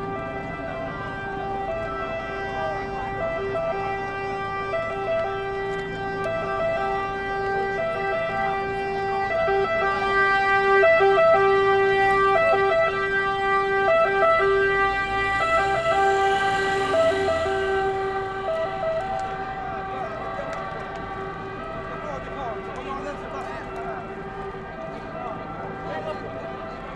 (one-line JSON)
{"title": "Via S.Giovanni in Laterano", "date": "2011-10-15 16:05:00", "latitude": "41.89", "longitude": "12.50", "altitude": "55", "timezone": "Europe/Rome"}